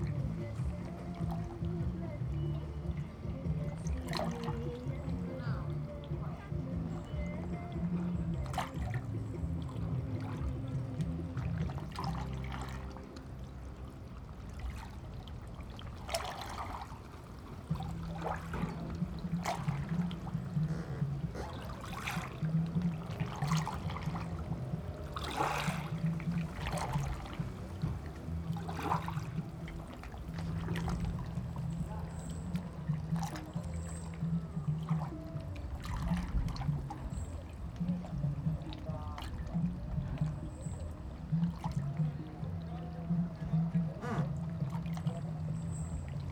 Xiyu Township, Penghu County - In the fishing port
In the fishing port, Windy
Zoom H6 + Rode NT4